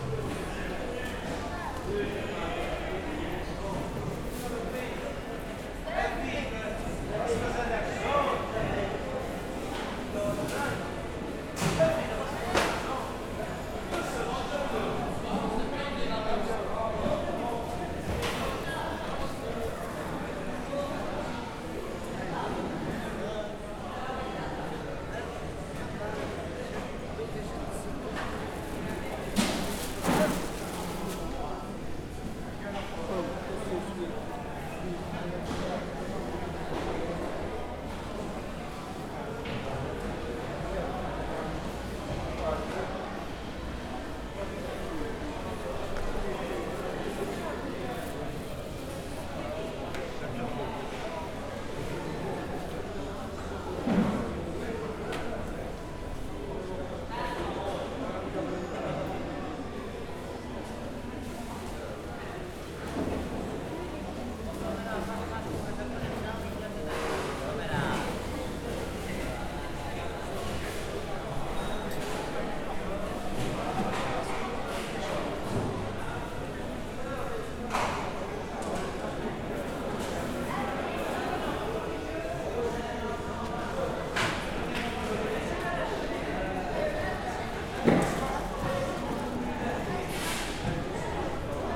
Ponta delgada, Azores-Portugal, market ambiance
ponta_delgada, vegetable market, people, random sounds